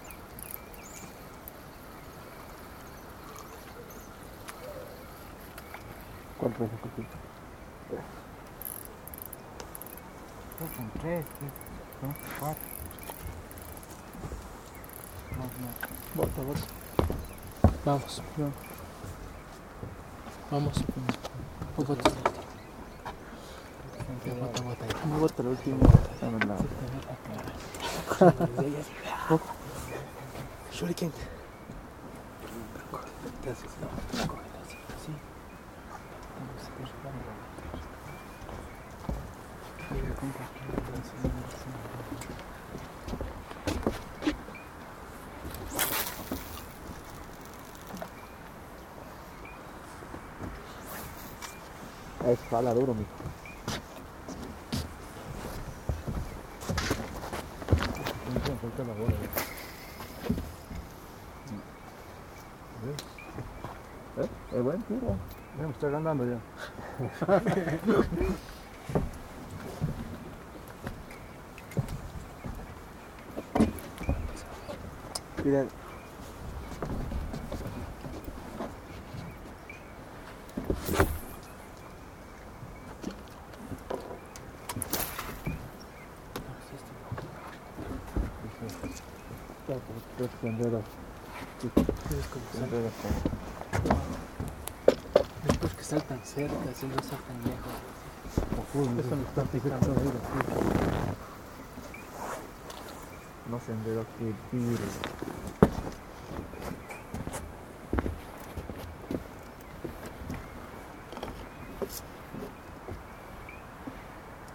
El Cajas national park, Llaviucu Lake.
Returning from the river west from the lake, I met a family of fishermen which were staying at the dock for the night.
Recorded with TASCAM DR100 built-in mics and a homemade windshield.
4 September, 18:30